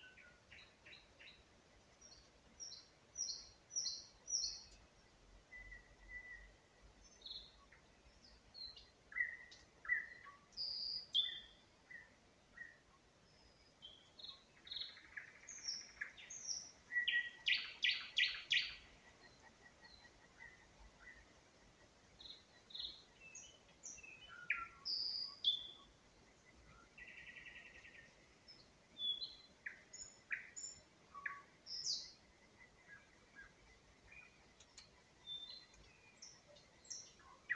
Unnamed Road, Гунча, Вінницька область, Україна - Spring, nightingale singing